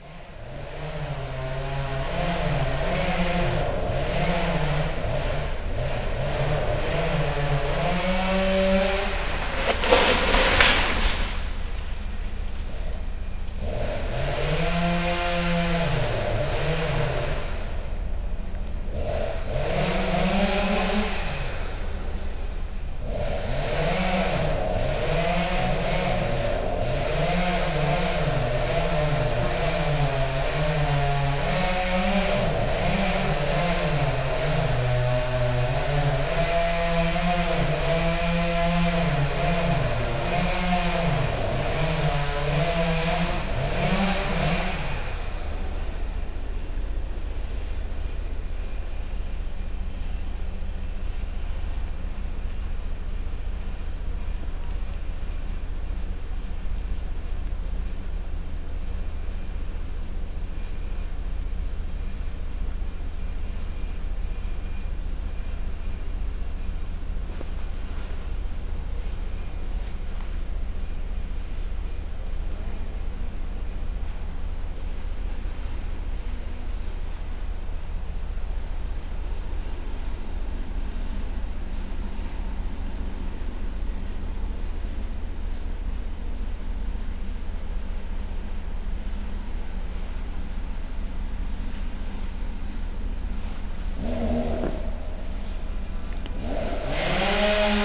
Krusvari, Chorwacja - forest works at a waterfall site
recorded at one of the waterfalls on the seven waterfalls trail near Buzet. chainsaw and lumberjack conversations (roland r-07)
Istarska županija, Hrvatska, 7 September 2021